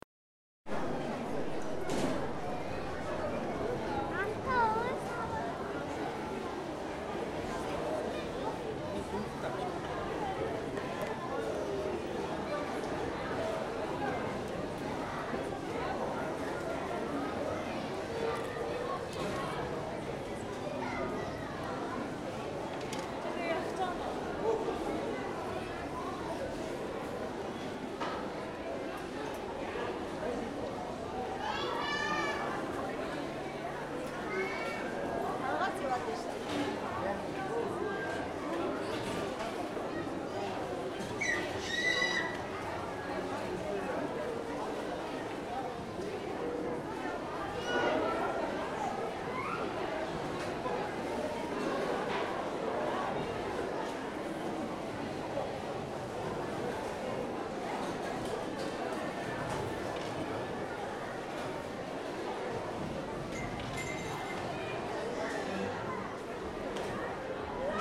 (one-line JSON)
{"title": "National amusement park, Ulaanbaatar, Mongolei - food court 2", "date": "2013-06-01 15:30:00", "description": "another recording some seconds later", "latitude": "47.91", "longitude": "106.92", "altitude": "1292", "timezone": "Asia/Ulaanbaatar"}